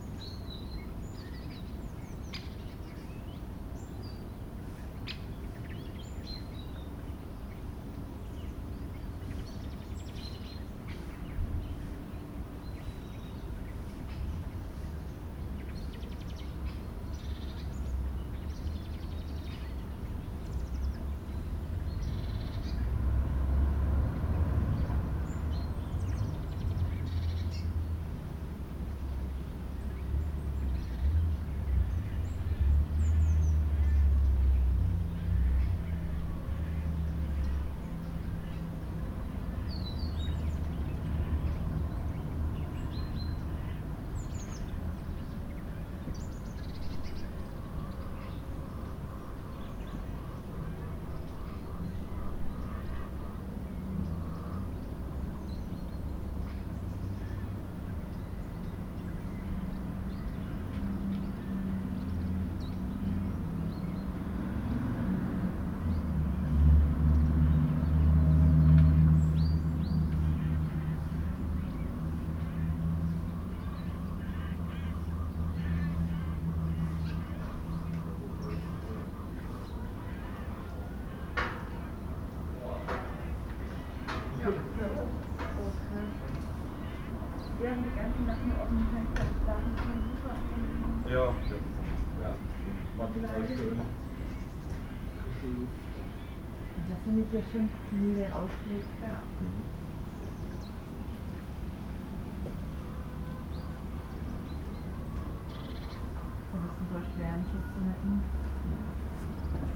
Im Weedengarten, Battenberg (Pfalz), Deutschland - Hotel Hofgut Battenberg. Morning sounds in the garden
Morning sounds recorded from the windowsill on the 2nd floor facing the garden and wood behind the building.
Landkreis Bad Dürkheim, Rheinland-Pfalz, Deutschland, 13 September 2021